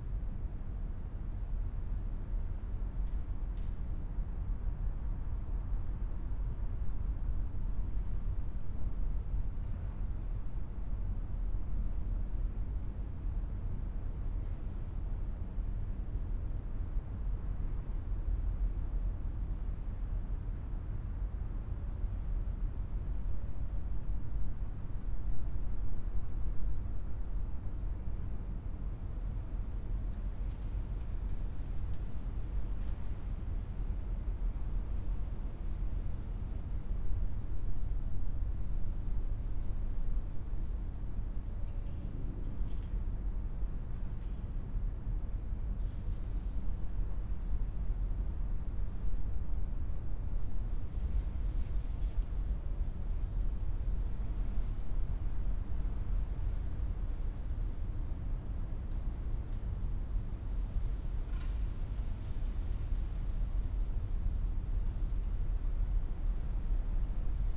Punto Franco Nord, Trieste, Italy - night ambience
night ambience at former stables building
(SD702, NT1A AB)
10 September 2013, 00:30